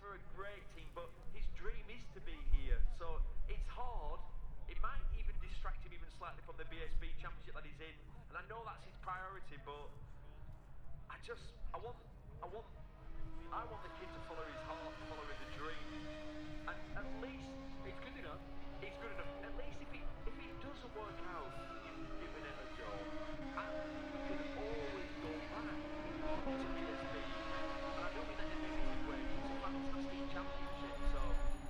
Silverstone Circuit, Towcester, UK - british motorcycle grand prix 2022 ... moto two ...
british motorcycle grand prix 2022 ... moto two free practice two ... zoom h4n pro integral mics ... on mini tripod ...